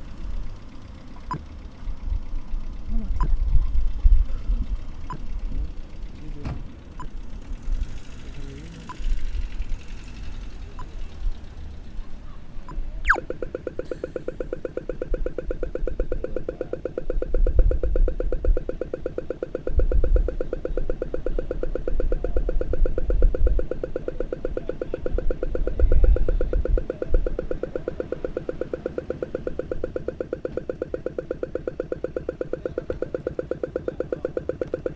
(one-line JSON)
{"title": "La Serena, Coquimbo Region, Chile - TRAFFIC SOUND - LIGHT", "date": "2011-02-15 23:57:00", "description": "THE SOUND OF A TRAFFIC LIGHT INTENDED TO HELP BLIND PEOPLE IN DOWNTOWN, LA SERENA, CHILE. AT SOME POINT YOU CAN HEAR THE LOVELY FRAN LAUGHS", "latitude": "-29.91", "longitude": "-71.25", "altitude": "26", "timezone": "America/Santiago"}